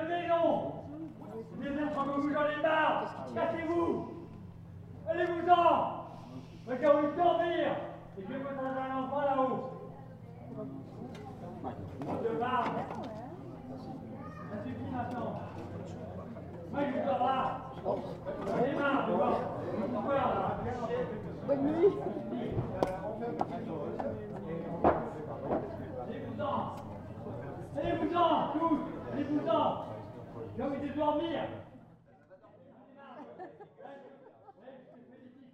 Lodève, France - y en a marre du jazz